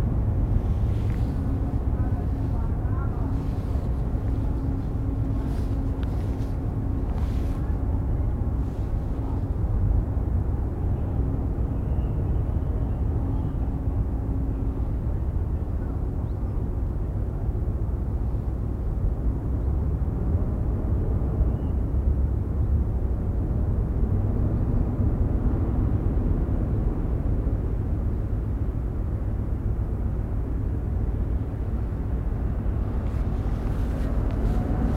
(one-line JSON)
{"title": "Kallosluis, Beveren, België - Kallosluis", "date": "2019-02-24 15:47:00", "description": "[Zoom H4n Pro] Ship passing through the lock at Kallo.", "latitude": "51.26", "longitude": "4.28", "altitude": "6", "timezone": "GMT+1"}